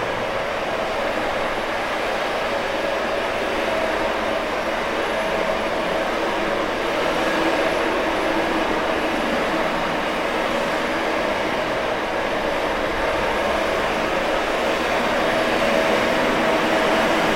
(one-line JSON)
{"title": "Anykščiai district municipality, Lithuania - 5861479284", "date": "2012-09-04 05:05:00", "latitude": "55.56", "longitude": "25.09", "altitude": "69", "timezone": "Europe/Vilnius"}